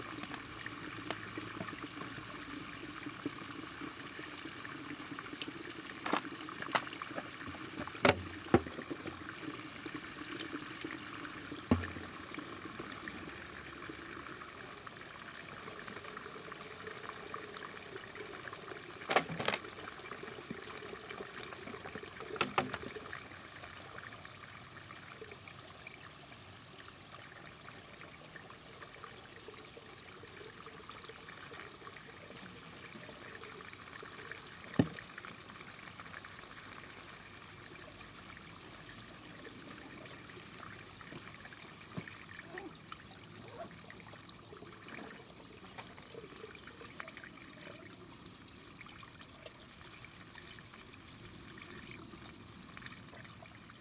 One of the oldest and most popular springs in Latgale region. People come here to fill yje bottles with fresh "holy" water
Latgale, Latvija